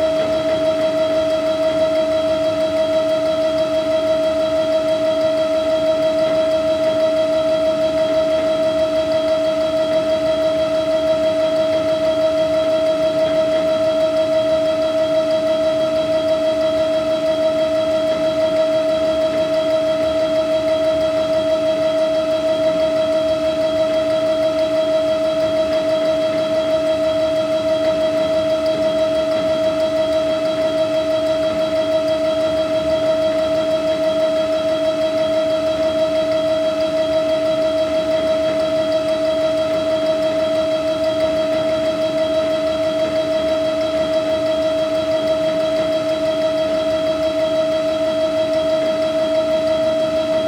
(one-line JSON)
{"title": "Saintes-Maries-de-la-Mer, Frankreich - Château d'Avignon en Camargue - Factory building, 'Le domaine des murmures # 1'", "date": "2014-08-14 13:39:00", "description": "Château d'Avignon en Camargue - Factory building, 'Le domaine des murmures # 1'.\nFrom July, 19th, to Octobre, 19th in 2014, there is a pretty fine sound art exhibiton at the Château d'Avignon en Camargue. Titled 'Le domaine des murmures # 1', several site-specific sound works turn the parc and some of the outbuildings into a pulsating soundscape. Visitors are invited to explore the works of twelve different artists.\nIn this particular recording, you can hear the drone of an old water pump which was once driven by steam, and is now powered by electricity. You will also notice the complete absence of sound from the installation by Emmanuel Lagarrigue in the same facility.\n[Hi-MD-recorder Sony MZ-NH900, Beyerdynamic MCE 82]", "latitude": "43.56", "longitude": "4.41", "altitude": "9", "timezone": "Europe/Paris"}